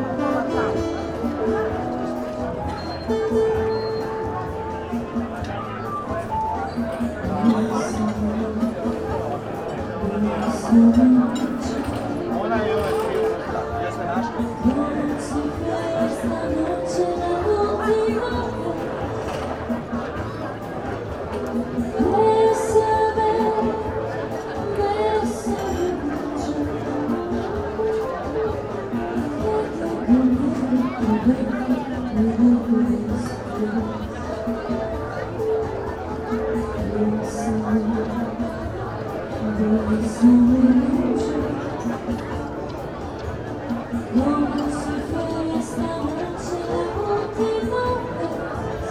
sea room, Novigrad, Croatia - window, bessame ...
terrace band with ”besame mucho” song, restaurant, dinner time below, sounds of plates, forks etc. ...